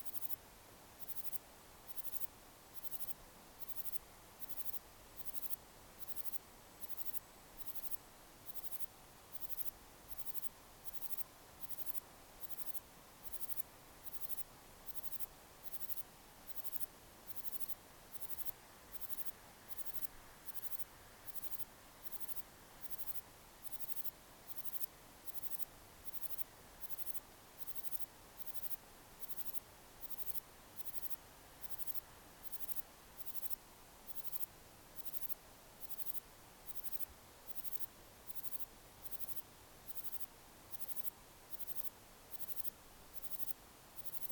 Sitting on the back fence, Lobster Farmhouse, Portland, Dorset, UK - Listening to the crickets
Returning to my B&B after dark, I noticed many crickets in the hedges around. It sounded amazing, but all of a sudden people were driving on the driveway where I heard the crickets, and I was self conscious about trying to record them. I did not want to draw attention to myself, but as I rounded the corner of where I was staying, I realised that a single cricket was making its wondrous music behind the hedge. I positioned the recorder close to its place and sat back a little distance away to listen acoustically to the sound and to the distant surf of the sea. To dogs barking, someone squeaking home on their bicycle. The white noise of traffic on the road. The evening stillness. Then happiest of happy times, a small and industrious hedgehog came bowling down the path, all business and bustle. I really do love a hedgehog.
23 July